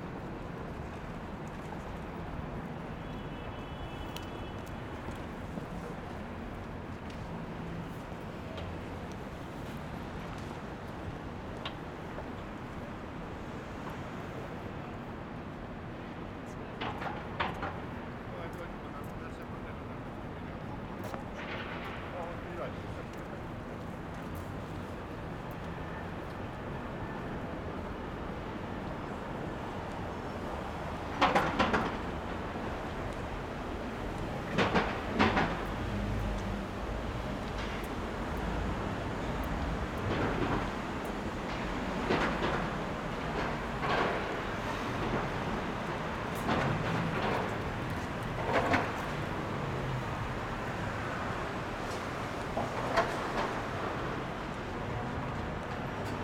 Lexington Av/E 52 St, New York, NY, USA - Walking down Lexington Ave.
Walking down Lexington Ave.